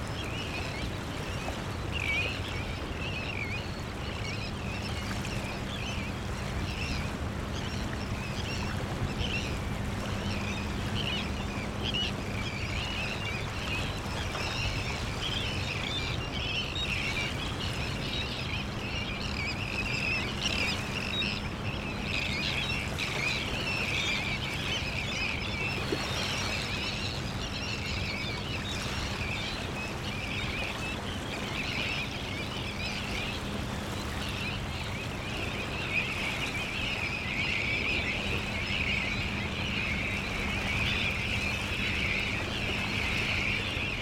Unnamed Road, Gdańsk, Poland - Mewia Łacha 3
Mewia Łacha 3
August 2017